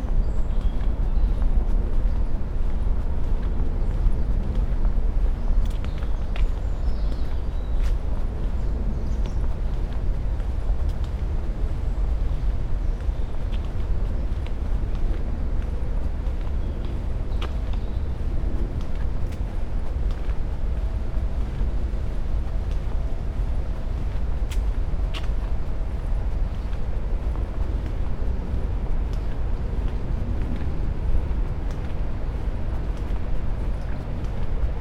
Heron Island, Reading, UK - Walking to Caversham Weir
A short walk from the bridge over the brook at Heron Island (location marked on the map) to Caversham Weir (spaced pair of Sennheiser 8020s with SD MixPre6).
18 September 2017, 12:30